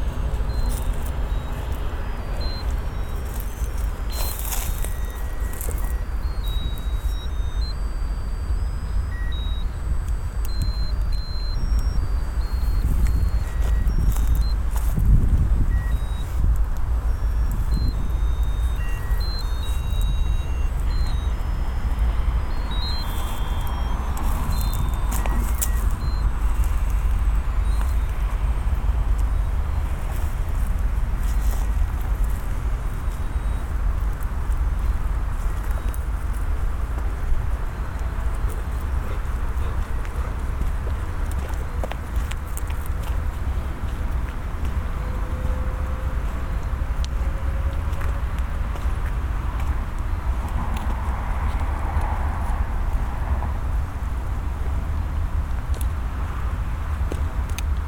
{"title": "Toulouse, France - Chistmas card's song in a wasteland", "date": "2017-12-19 22:33:00", "description": "This strange sound is one of the artistic intervention of #Creve Hivernale# (an exhibition for december 2016, for this specific place). It's several christmas card, singing together but not a the same time.", "latitude": "43.63", "longitude": "1.48", "altitude": "136", "timezone": "GMT+1"}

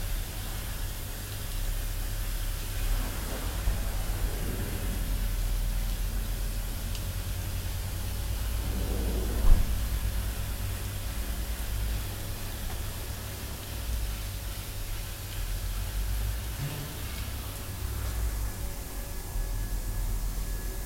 {"title": "building site, cologne - building site, cologne, dreikoenigenstr", "description": "recorded june 4, 2008. project: \"hasenbrot - a private sound diary\".", "latitude": "50.92", "longitude": "6.96", "altitude": "54", "timezone": "GMT+1"}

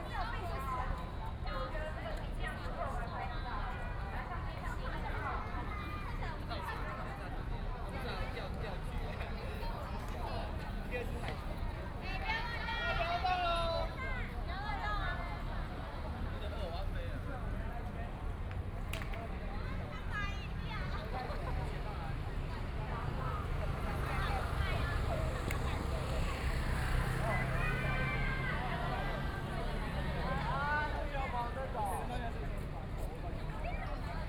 {"title": "Hai'an Rd., Kaohsiung City - A lot of tourists", "date": "2014-05-14 14:57:00", "description": "A lot of tourists, In the small square, High school tours, Hot weather, Birds", "latitude": "22.61", "longitude": "120.27", "altitude": "12", "timezone": "Asia/Taipei"}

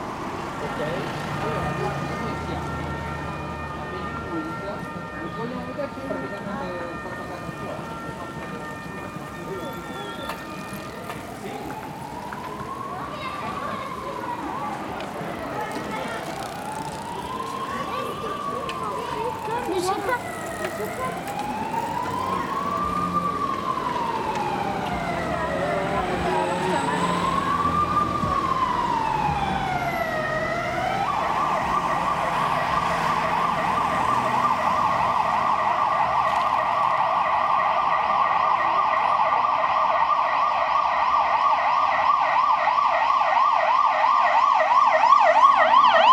{"title": "Avenue Louise, Bruxelles, Belgique - Car free day - journée sans voitures", "date": "2021-09-19 15:29:00", "description": "Bikes, people passing by, trams, police siren in the end.\nTech Note : Sony PCM-D100 internal microphones, wide position.", "latitude": "50.83", "longitude": "4.36", "altitude": "77", "timezone": "Europe/Brussels"}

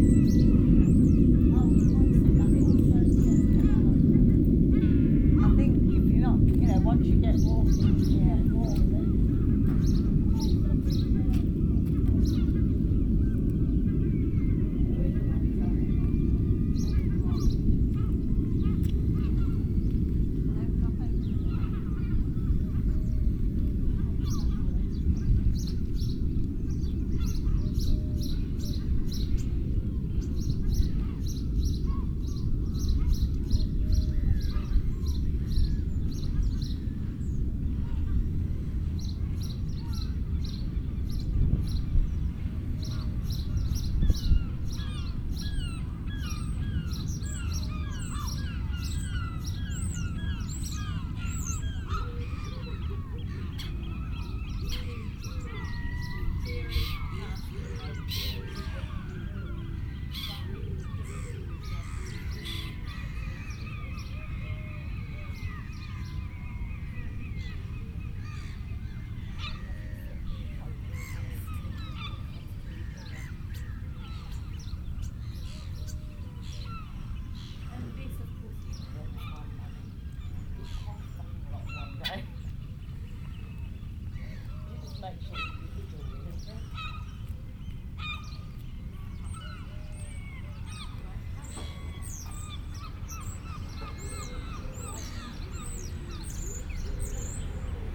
{"title": "Wells-Next-the-Sea, Norfolk, UK - Well quayside", "date": "2013-07-18 08:30:00", "description": "Sitting on the quayside on World Listening Day watching the mist lift over the boats at Wells. Binaural recording best enjoyed on headphones.", "latitude": "52.96", "longitude": "0.85", "altitude": "8", "timezone": "Europe/London"}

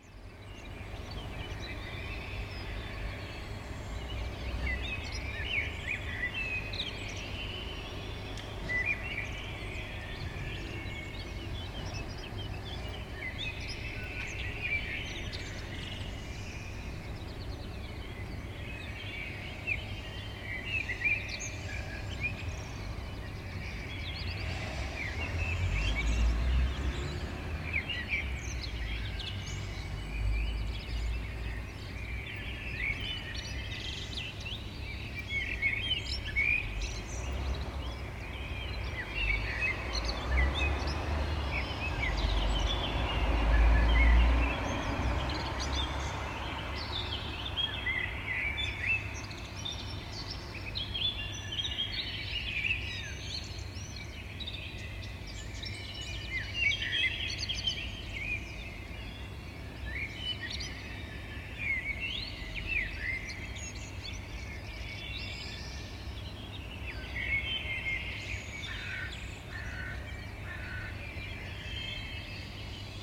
{"title": "Morning chorus am Sankt Elisabeth Platz, Vienna", "date": "2011-06-06 04:15:00", "description": "Morning bird (and traffic) chorus heard outside my window", "latitude": "48.19", "longitude": "16.38", "altitude": "196", "timezone": "Europe/Vienna"}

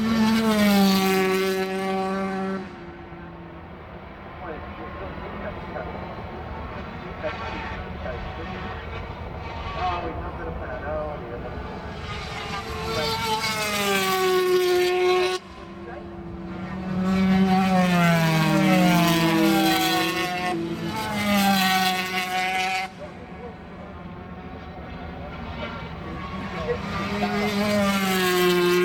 {"title": "Castle Donington, UK - British Motorcycle Grand Prix 1999 ... 500cc ...", "date": "1999-07-04 10:00:00", "description": "500cc motorcycle warm up ... Starkeys ... Donington Park ... one point stereo mic to minidisk ...", "latitude": "52.83", "longitude": "-1.37", "altitude": "81", "timezone": "Europe/Berlin"}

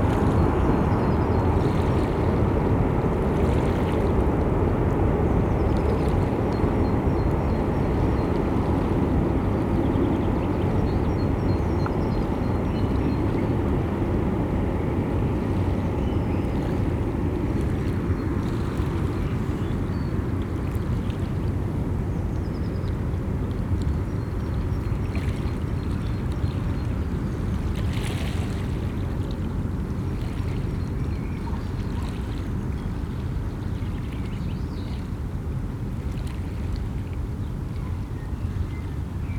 {"title": "Martin-Luther-King-Straße, Bonn, Deutschland - Freight trains at the Rhine", "date": "2010-04-29 12:00:00", "description": "Every few minutes, the on and off swelling sound of freight trains or large cargo ships breaks through the riverside atmosphere on the Rhine and occupies the listening space.", "latitude": "50.71", "longitude": "7.16", "altitude": "51", "timezone": "Europe/Berlin"}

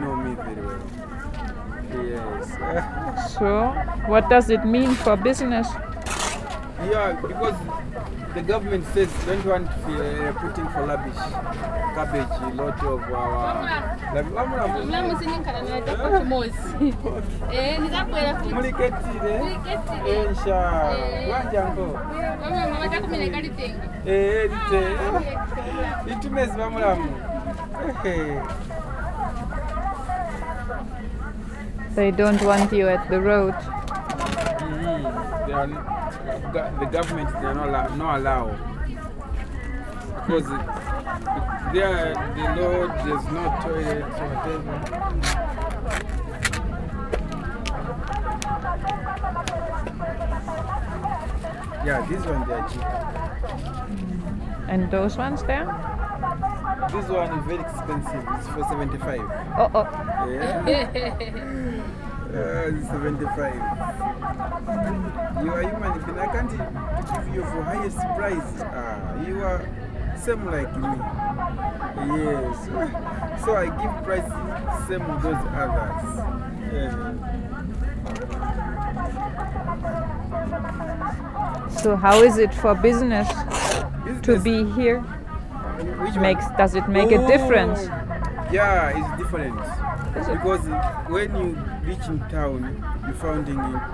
{"title": "Street Market, Choma, Zambia - Chitenge trader", "date": "2018-08-13 11:32:00", "description": "...continuing my stroll among the Chitenge traders... chatting...", "latitude": "-16.81", "longitude": "26.99", "altitude": "1316", "timezone": "Africa/Lusaka"}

